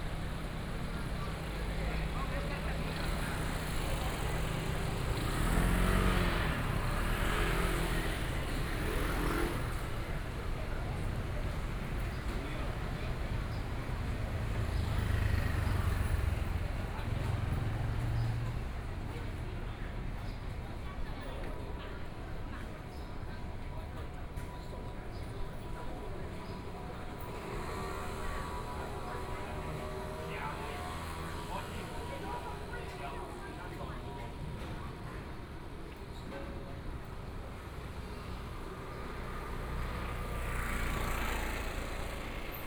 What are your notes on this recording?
Walking in the collection of residential communities, Birdsong, Traffic Sound, The weather is very hot, Traveling by train